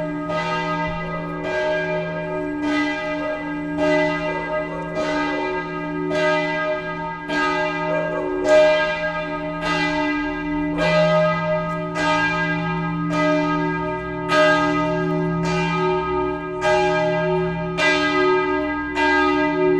Ciril-Metodov Trg, Ljubljana, Slowenien - bells of St. Nikolaja cathedral
bells of St.Nicholas cathedral at noon, in the narrow streets around the church
(Sony PCM D50, DPA4060)
6 November 2012, 12:00